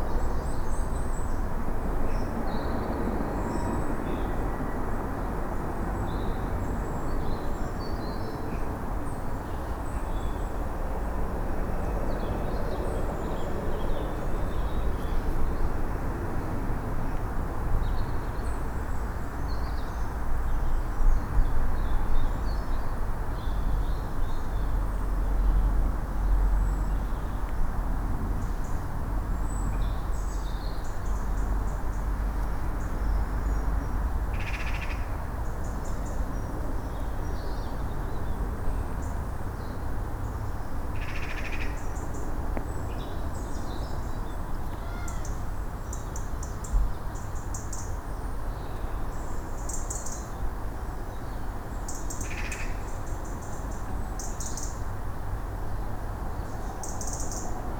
Plymouth, UK - Where the stream goes into a pipe, Kinterbury Creek
7 December 2013, 09:00